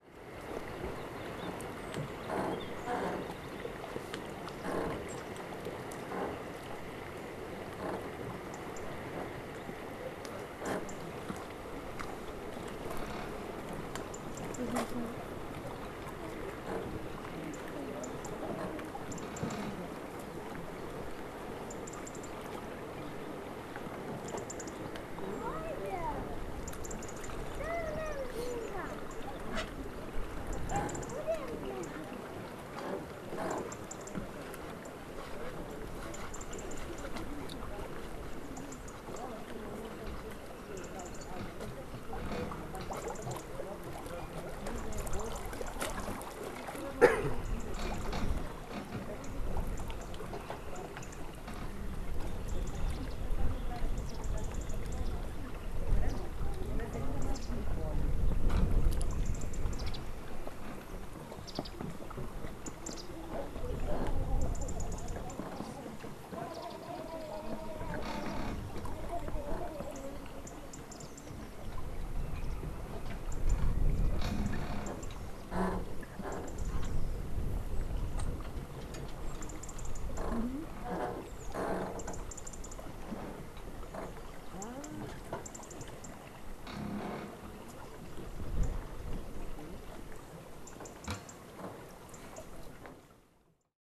freixo de Numao, Douro river, metallic river dock
metallic dock, river, boats, birds, water, children